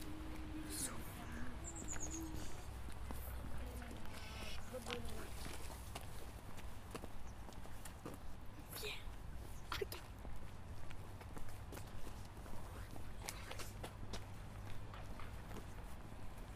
Ecole élémentaire Pérey, Cronenbourg Ouest, Strasbourg, France - Schoolyard with some planes passing in the air
Some children walking in the schoolyard and listening to the planes passing above them.